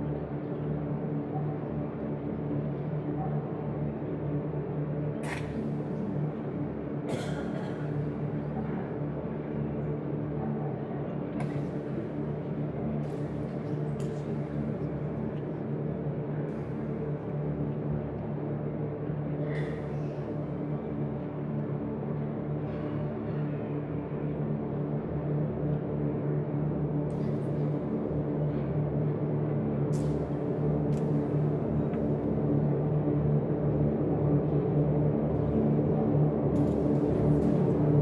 Inside the beautiful old theatre building. The sound of the audience first clapping then watching noisily the beginning of a dance show.
international city scapes - social ambiences and topographic field recordings